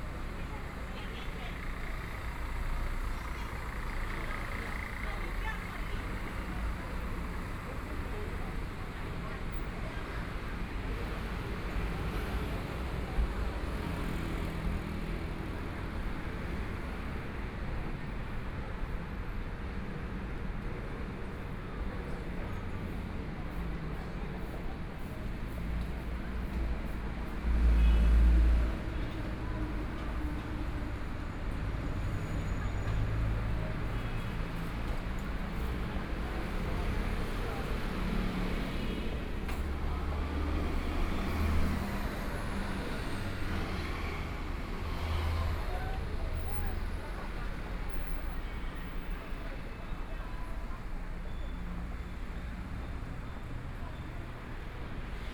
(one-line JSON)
{"title": "Zhongshan N. Rd., Taipei City - on the road", "date": "2014-02-08 15:04:00", "description": "walking on the road, Environmental sounds, Traffic Sound, Motorcycle Sound, Pedestrians on the road, Binaural recordings, Zoom H4n+ Soundman OKM II", "latitude": "25.06", "longitude": "121.52", "timezone": "Asia/Taipei"}